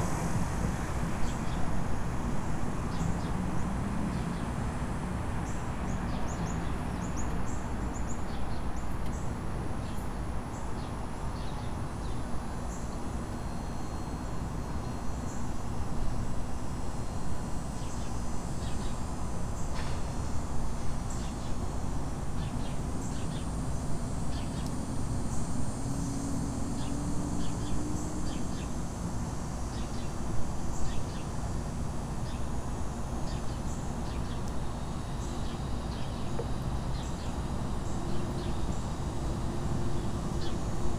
Electric Cicadas, Alexandria, Louisiana, USA - Electric Cicadas
Okay, not really electric but listen... Dogs barking, squirrel chatter, bugs, traffic, birds.
Tascam DR100 MK2